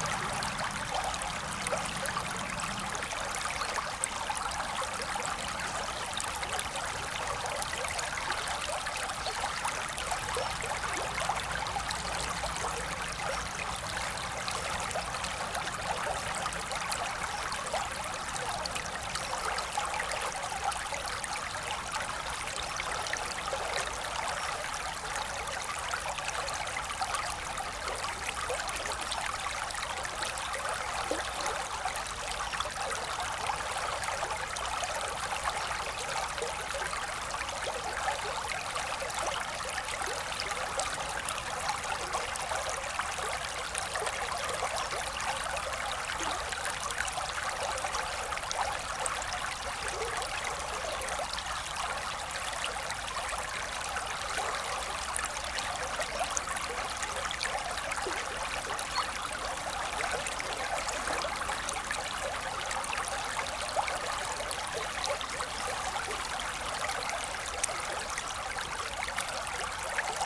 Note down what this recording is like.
The Drouette river flowing quietly during a beautiful cold winter day.